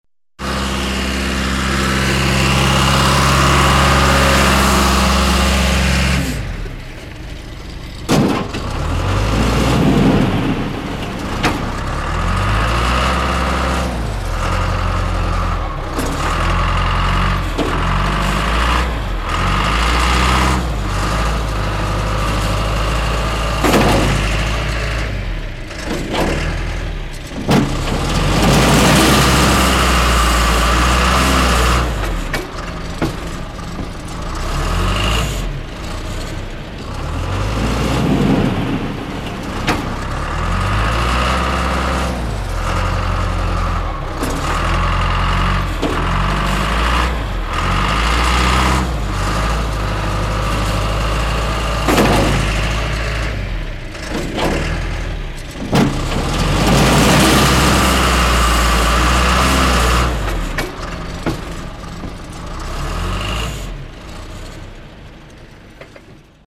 On a barnyard. The sound of a tractor with a trailer transporting wood panels upwards a muddy path.
Enscherange, Traktor
Auf einem Bauernhof. Das Geräusch von einem Traktor mit einem Anhänger, der Holzplatten einen matschigen Weg nach oben transportiert.
Enscherange, tracteur en action
Dans une basse-cour. Le bruit d’un tracteur avec une remorque qui transporte des morceaux de bois sur un chemin boueux.
Enscherange, Luxembourg, 13 September